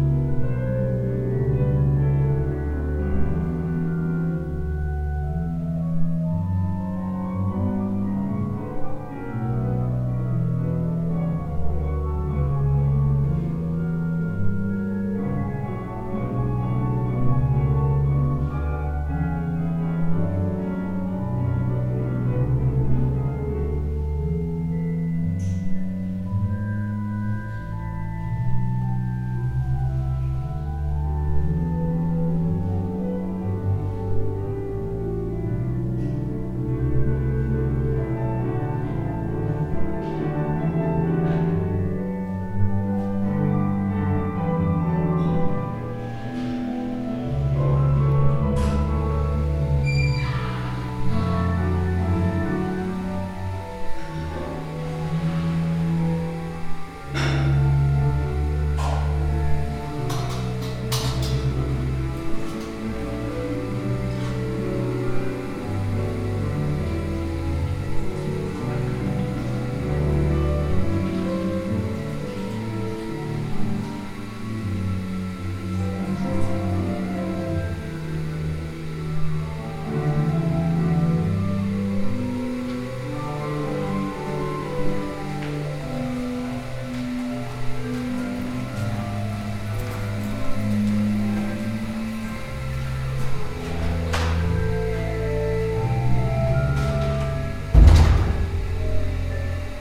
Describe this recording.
When I was going by the staircase, Ive heard the organs more and more. I was listening unknown song, that suddenly ended and i just noticed tones of piano from next door. You can hear strange compositions and instruments in corridors of HAMU. A lot of artistic words meet each other there in calmness of oasis of the music faculty directly in the centre of hectic turists centre. You have to listen to it carefully from under the windows.